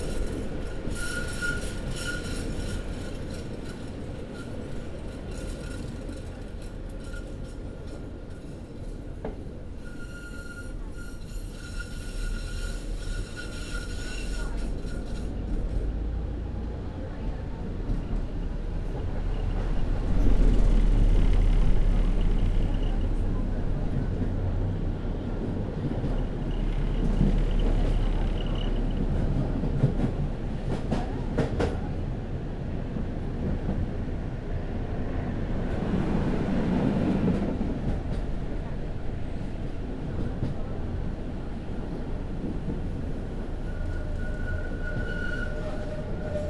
Poznan, Jerzyce district, express tram line - approaching downtown
trams slow down here and take several strong turns, constructions site clanks out of the window.